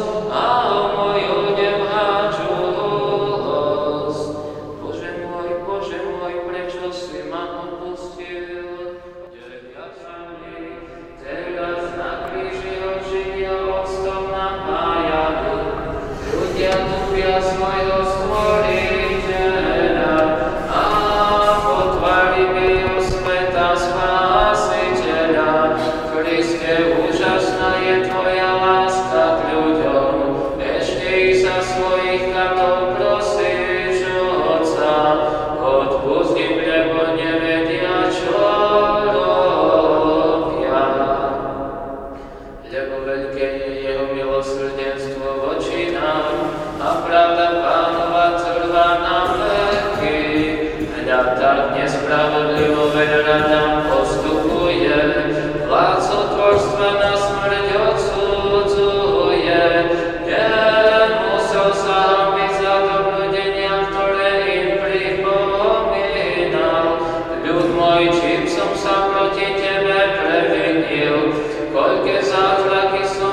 Spalena street, Saint Trinity church
eastern celebration of Slovak GreekCatolic church.
22 April 2011